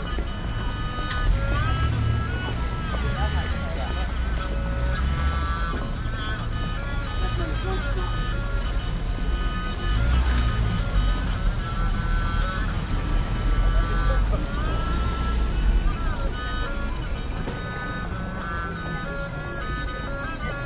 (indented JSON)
{
  "title": "Passanten, Schritte, chinesische Musik - Passanten, Schritte, chinesische Geige",
  "description": "älterer chinese spielt auf der 2-saitigen chinesischen geige. leise, aber der klang setzt sich durch gegen strassenlärm und weihnachtsrummel. der mann wärmt sich nach einer minute die finger. sagt: china. und: kalt. 2 euro für die vorstellung.",
  "latitude": "52.52",
  "longitude": "13.39",
  "altitude": "29",
  "timezone": "GMT+1"
}